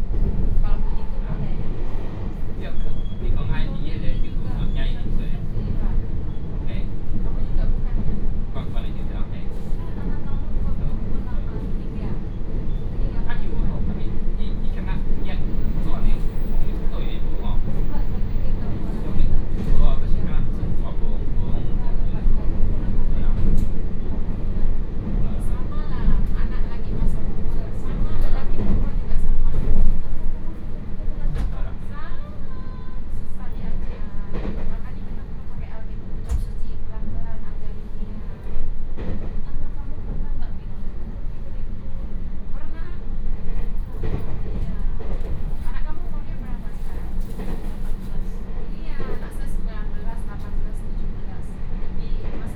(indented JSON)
{
  "title": "Yangmei, Taoyuan County - An uncomfortable process",
  "date": "2017-01-18 12:08:00",
  "description": "In the compartment, An uncomfortable process, It is very regrettable, Dialogue in the compartment",
  "latitude": "24.93",
  "longitude": "121.10",
  "altitude": "129",
  "timezone": "Europe/Berlin"
}